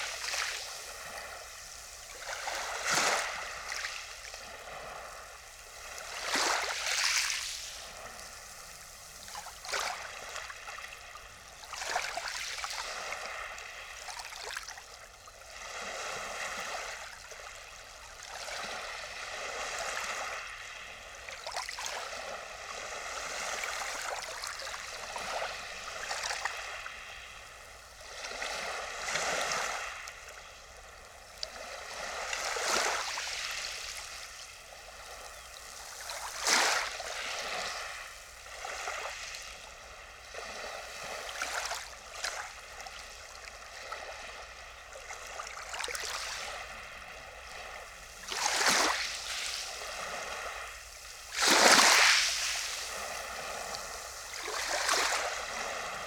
Baltic Sea, Nordstrand Dranske, Rügen - Tiny waves on sandy shore
late may, a calm sunny evening at a sandy shoreline, tiny waves rolling in, moving sand up and down, in and out
recorded with Olympus LS11, pluginpowered PUI-5024 mics, AB_50 stereo setup
Vorpommern-Rügen, Mecklenburg-Vorpommern, Deutschland, 30 May 2021, ~19:00